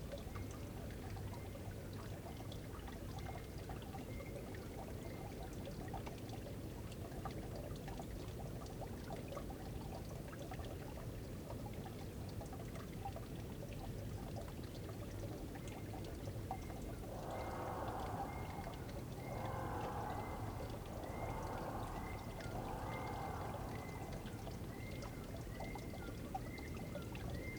Forêt d'Éperlecques, France - Corbeaux D'Eperlecques
Corbeaux dans clairière forêt d'Eperlecques, en hiver.